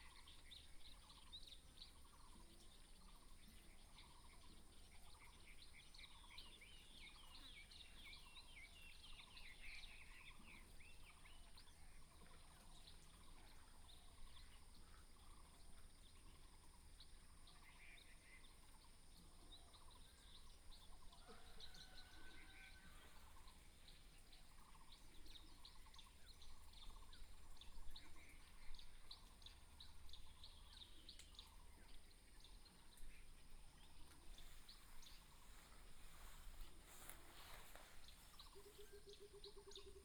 金龍湖休憩區, Dawu Township, Taitung County - Various bird tweets
in the morning, Various bird tweets, birds sound, Fly sound, Chicken roar
Binaural recordings, Sony PCM D100+ Soundman OKM II